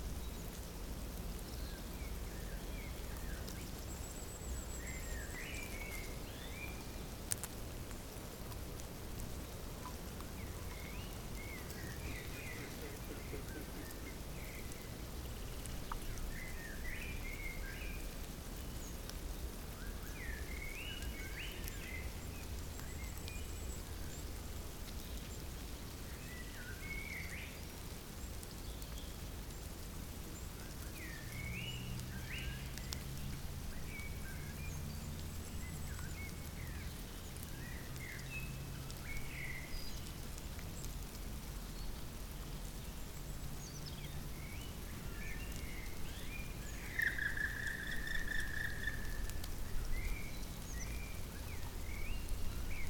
Frickenhausen, Deutschland - Ants make a rustling noise.
Ants. A great many of ants make them hearable.
Sony PCM-D50; Rec. Level 5; 120°
Landkreis Esslingen, Baden-Württemberg, Deutschland, 30 March 2021